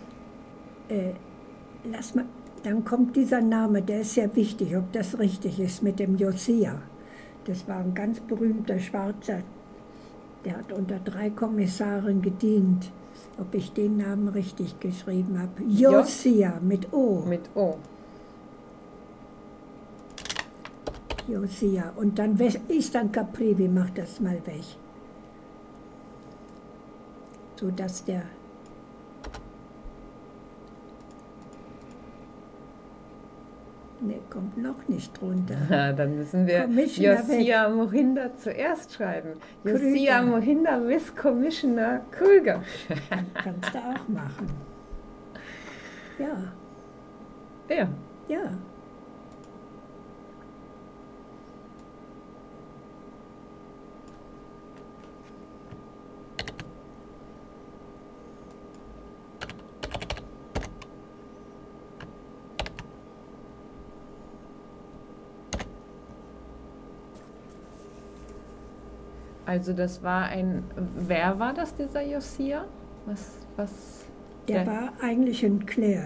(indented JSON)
{
  "title": "Office of Maria Fisch, Swakopmund, Namibia - A picture of Josiah Muhinda...",
  "date": "2009-01-02 15:44:00",
  "description": "I’m with Maria Fisch in her small office full of books and papers helping her archiving some of her images from the Kavango on the computer. While doing so, I’m trying to squeeze as many stories as possible out of her; sometimes I’m successful… but Maria is a hard worker...\nMaria Fisch spent 20 years in the Kavango area, first as a doctor then as ethnographer. She published many books on the history, culture and languages of the area.",
  "latitude": "-22.68",
  "longitude": "14.53",
  "altitude": "17",
  "timezone": "Africa/Windhoek"
}